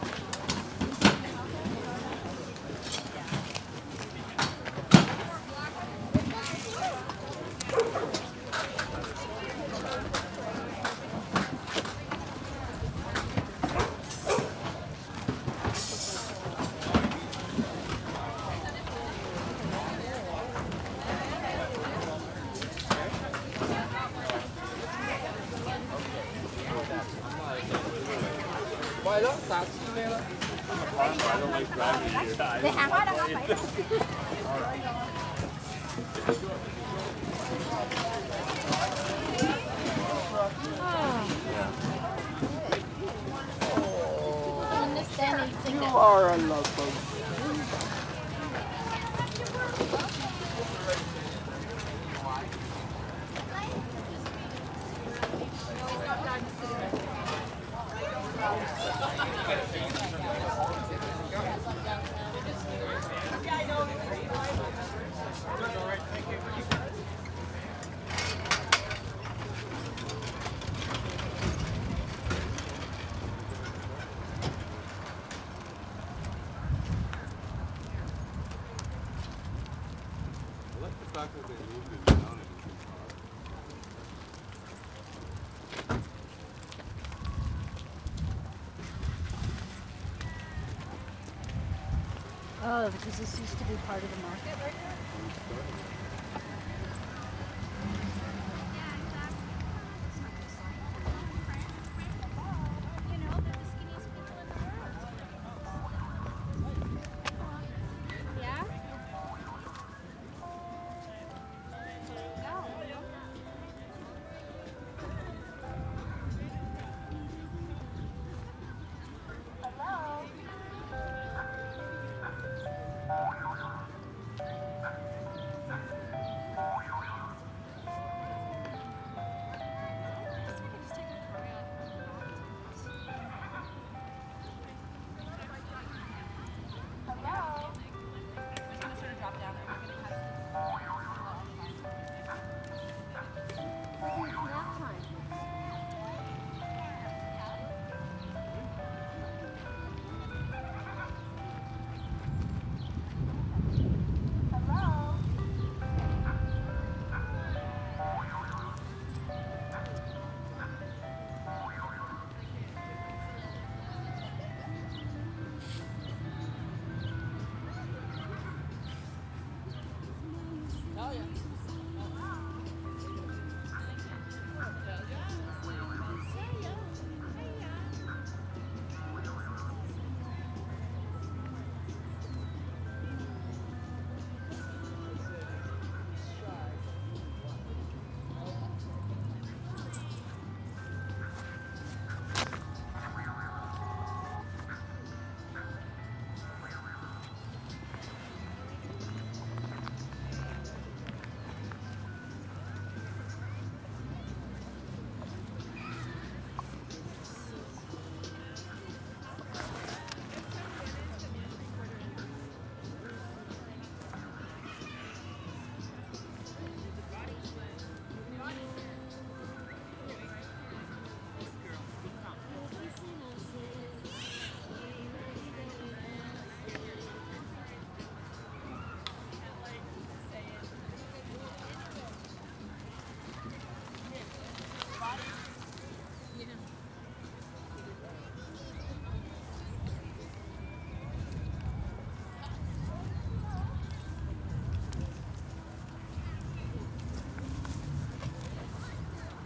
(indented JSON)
{
  "title": "3350 Victoria Drive, Trout Lake Parking Lot, Closing the Farmers Market",
  "date": "2009-05-06 14:06:00",
  "description": "Farmers Market, Shops, Ice cream truck, people, walking",
  "latitude": "49.26",
  "longitude": "-123.07",
  "altitude": "36",
  "timezone": "America/Vancouver"
}